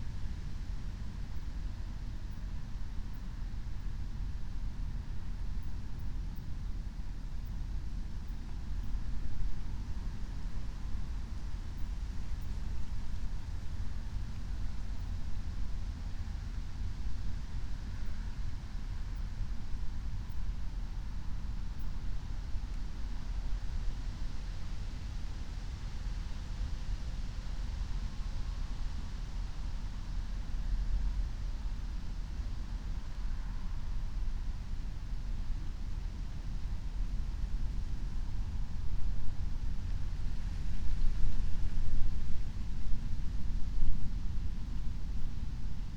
04:00 Berlin, Alt-Friedrichsfelde, Dreiecksee - train junction, pond ambience
Deutschland, 31 August 2021